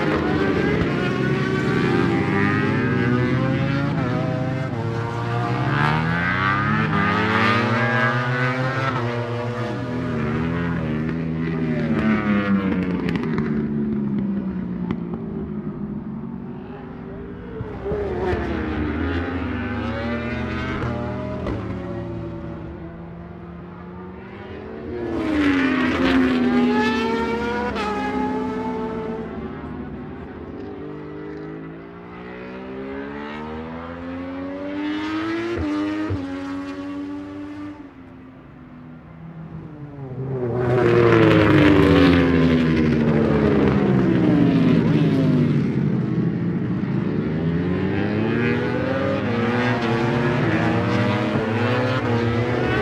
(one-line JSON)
{"title": "Donington Park Circuit, Derby, United Kingdom - British Motorcycle Grand Prix 2004 ... free practice ...", "date": "2004-07-23 10:20:00", "description": "British Motorcycle Grand Prix 2004 ... free practice ... part two ... one point stereo mic to mini disk ...", "latitude": "52.83", "longitude": "-1.38", "altitude": "94", "timezone": "Europe/London"}